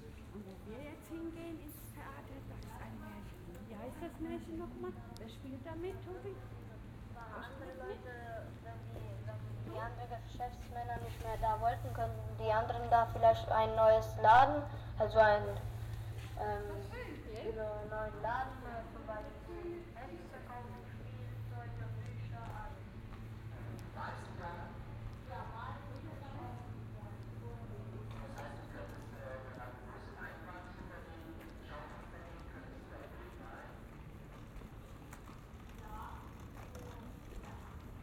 Der Leerstand spricht. Bad Orb, Refugees - Leerstandwalkback
'Der Leerstand spricht': From the street musicians a walk back down Hauptstrasse. The moderator is interviewing a youth, who proposes to use the empty houses for refugrees. Binaural recording
Bad Orb, Germany